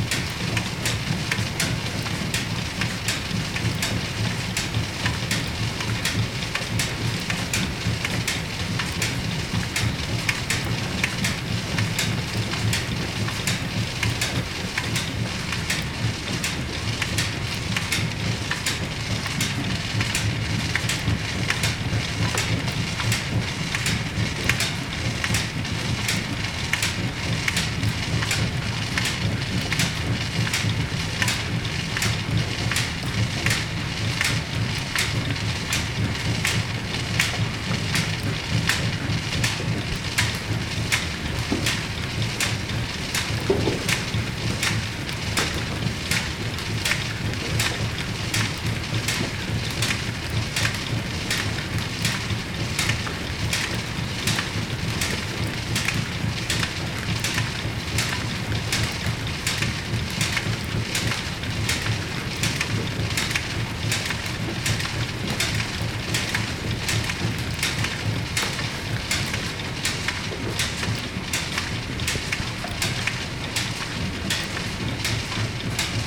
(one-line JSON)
{"title": "enscherange, rackesmillen, gear drive - enscherange, rackesmillen, gear drive 02", "date": "2011-09-23 21:46:00", "description": "On the first floor of the mill. The sound of the gear drive and the silent sound of milled flour recorded inside the mechanic.\nEnscherange, Rackesmillen, Zahnradgetriebe\nIm ersten Stockwerk der Mühle. Die Klänge des Zahnradgetriebes und das leise Rieseln von gemahlenem Mehl aufgenommen innerhalb der Mechanik\nAu premier étage du moulin. Le bruit de l’engrenage et le léger bruit de la farine moulue, enregistrés à l’intérieur du mécanisme.", "latitude": "50.00", "longitude": "5.99", "altitude": "312", "timezone": "Europe/Luxembourg"}